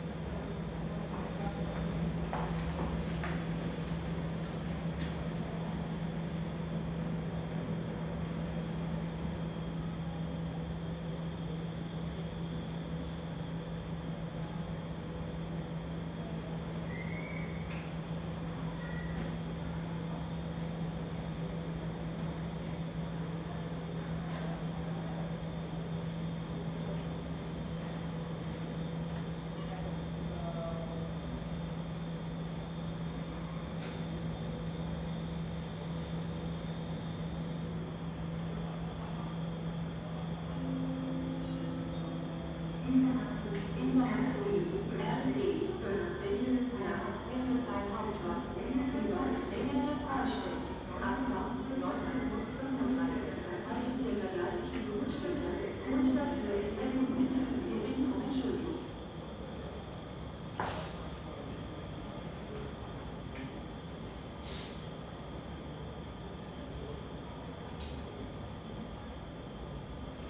Hauptbahnhof Braunschweig, Deutschland - waiting for departure
all trains are late because of heavy thunderstorms, departure is uncertain, ideling in waiting room, listening to the station
(Sony PCM D50, Primo EM172)
Braunschweig, Germany